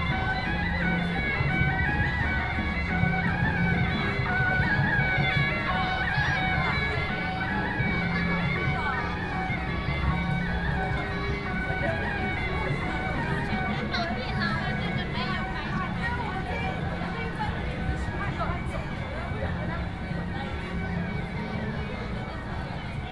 Ai 3rd Rd., Ren’ai Dist., Keelung City 基隆市 - Traditional shows
Festivals, Walking on the road, Traditional and modern variety shows, Keelung Mid.Summer Ghost Festival, Walking in the crowd
Keelung City, Taiwan